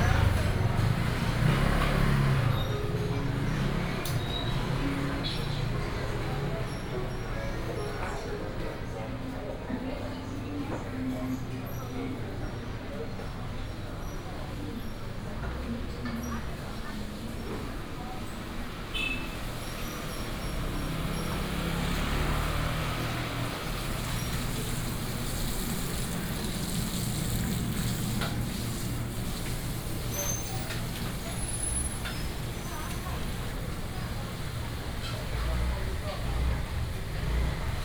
嘉義東市場, Chiayi City - Walking in the very old market
Walking in the very old market, Traffic sound
West District, Chiayi City, Taiwan, 18 April